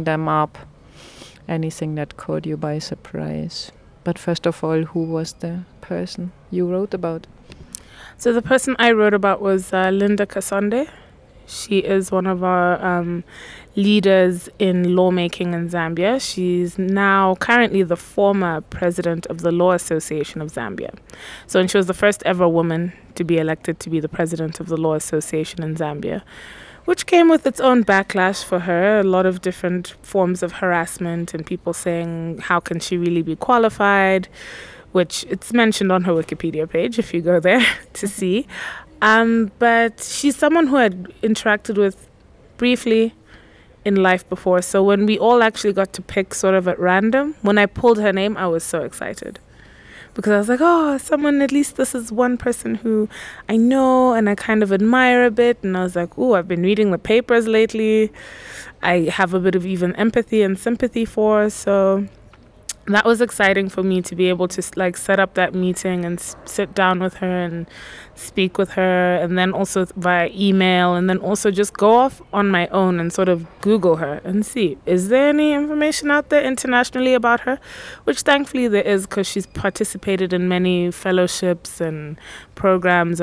Latitude, Leopards Ln, Lusaka, Zambia - Leelee Ngwenya contributing to Wiki Women Zambia
we are in the outskirts of Lusaka, in one of these surprising villas with leafy surrounding garden… this place called “Latitude” serves a gallery, events place, hotel… here, we caught up with another woman writer who contributed to the WikiWomenZambia project, Puthumile Ngwenya aka Leelee. In our conversation, Leelee shares details about her motivation to participate in the project and what the experience has meant for her as a woman media professional in the country…
the entire interview is archived here:
Lusaka District, Lusaka Province, Zambia, 11 December, 11:15